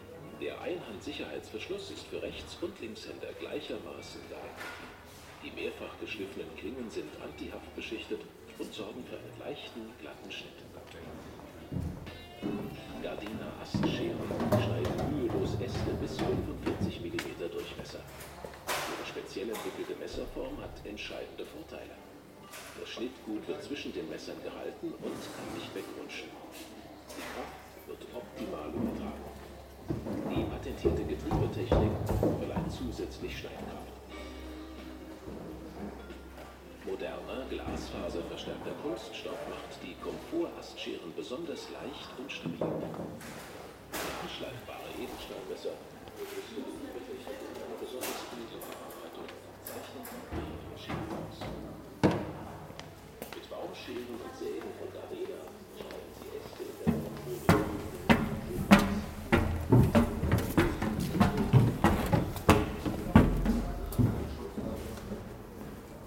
recorded july 1st, 2008.
project: "hasenbrot - a private sound diary"
Germany